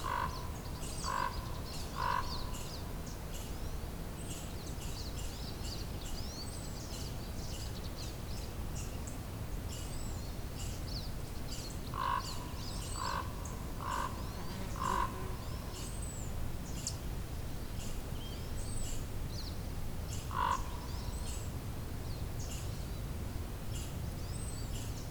Woodbury Village Rd, Ainsworth Hot Springs, BC, Canada - Morning. Hazy sky, looking at Kootenay Lake
9 August 2018, 07:20